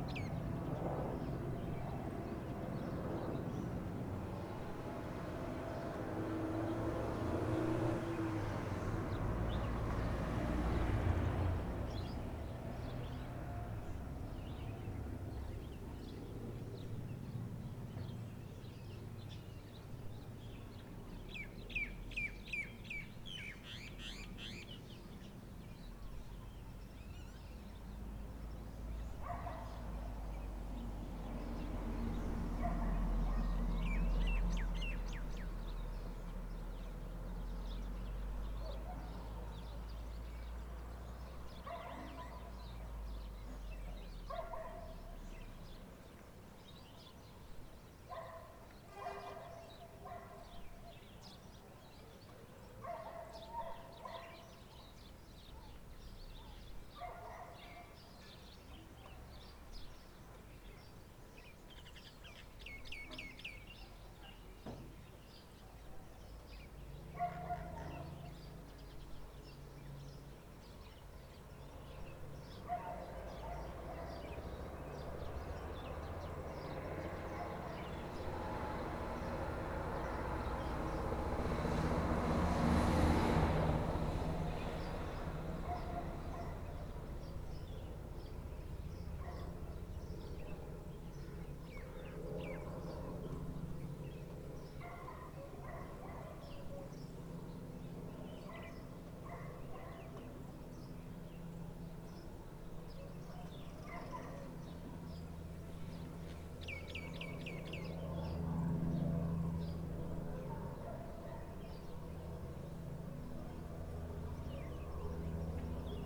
Rue Leconte De Lisle, Réunion - 20200217 104728-105854 CILAOS, tourisme par hélicoptère
3 TYPES D'HÉLICOS DIFFÉRENTS À LA SUITE CILAOS, ÎLE DE LA RÉUNION.